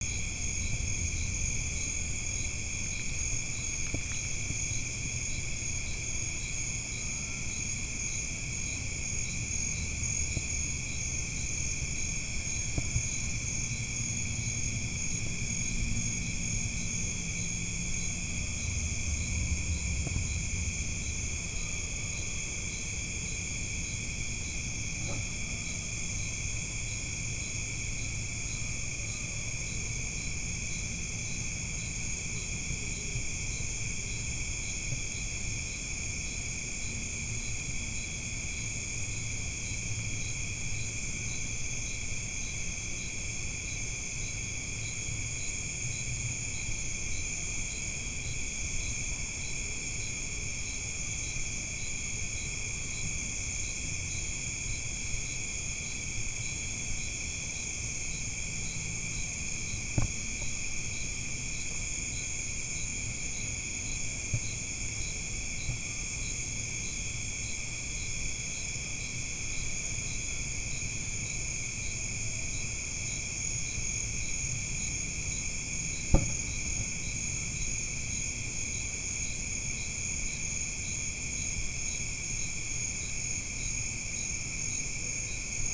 In the jungle outside Quibdo, Colombia - jungel1
Recording from jungle outside Quibdo, Colombia